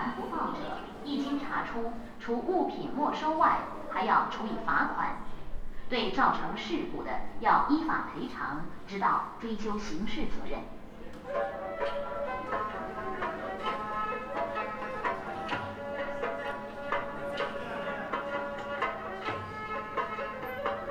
P.A. system in a bus station in Shangdi-La, Deqen, Yunnan, China.
10 February 2017, ~15:00